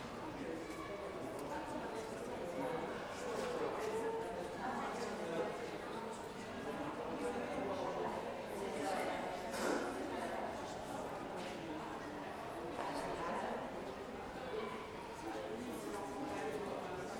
Passage des Étuves, Saint-Denis, France - Outside the Courthouse
This recording is one of a series of recording mapping the changing soundscape of Saint-Denis (Recorded with the internal microphones of a Tascam DR-40).